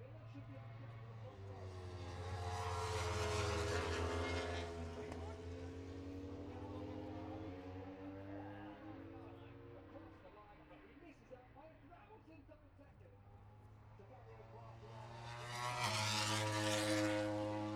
british motorcycle grand prix 2022 ... moto grand prix qualifying two ... outside of copse ... dpa 4060s clipped to bag to zoom h5 ...
England, United Kingdom, 6 August 2022, 14:35